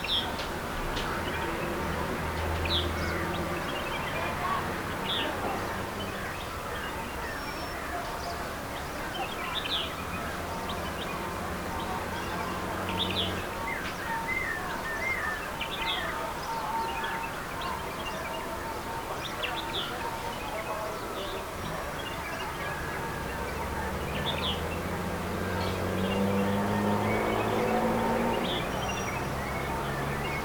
Nullatanni, Munnar, Kerala, India - dawn in Munnar - over the valley 5
dawn in Munnar - over the valley 5